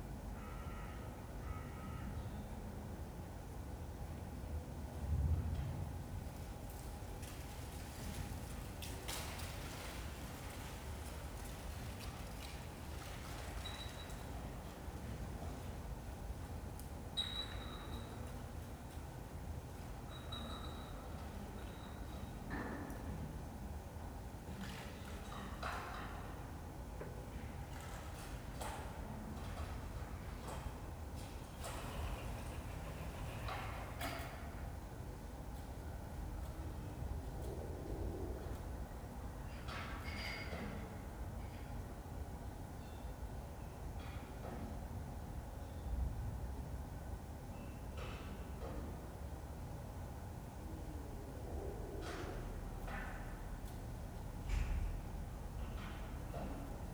Hiddenseer Str., Berlin, Germany - The Hinterhof from my 3rd floor window. Tuesday, 4 days after Covid-19 restrictions
More activity today. The sonic events are very musically spaced to my ear.